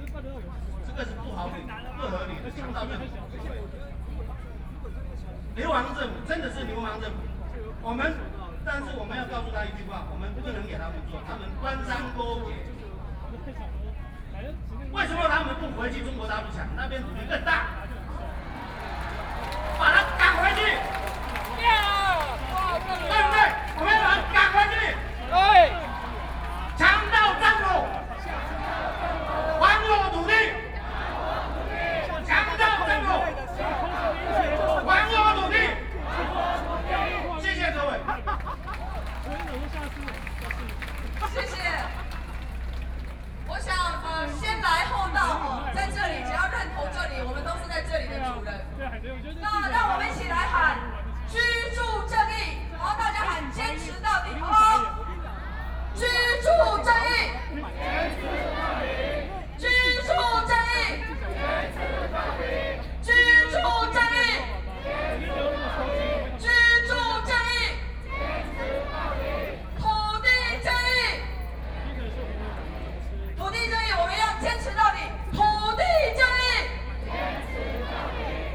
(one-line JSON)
{"title": "Ketagalan Boulevard, Zhongzheng District - Speech", "date": "2013-08-18 20:56:00", "description": "Protest, Self-Help Association of speech, Sony PCM D50 + Soundman OKM II", "latitude": "25.04", "longitude": "121.52", "altitude": "8", "timezone": "Asia/Taipei"}